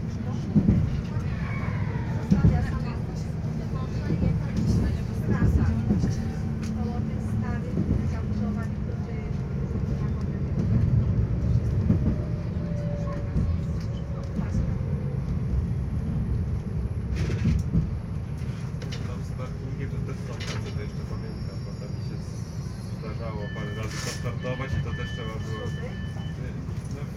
Szklarska Poręba, Poland - (895) Train ride
Binaural recording of a train ride from Szklarska Poręba Górna -> Szklarska Poręba Jakuszyce.
Recorded with DPA 4560 on Sound Devices MixPre-6 II.
województwo dolnośląskie, Polska, 17 February 2022, 12:30